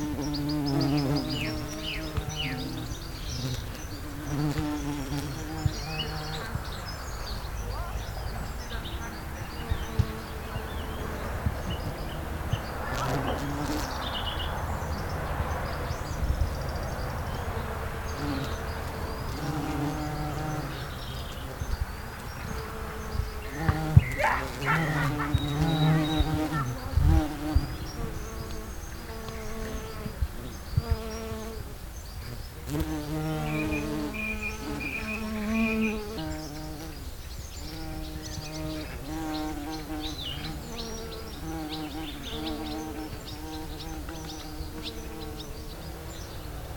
Piddle Valley School - Bees in the lavender garden
Bees buzzing around the lavender garden. Children playing and a road in the distance.
Recorded on an H4N zoom recorder and NTG2 microphone.
Sounds in Nature workshop run by Gabrielle Fry.
Dorset, UK